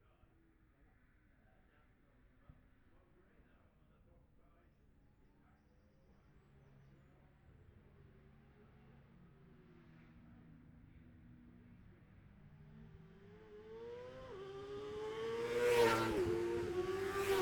Jacksons Ln, Scarborough, UK - olivers mount road racing 2021 ...
bob smith spring cup ... ultra-lightweights qualifying ... luhd pm-01 to zoom h5 ...
22 May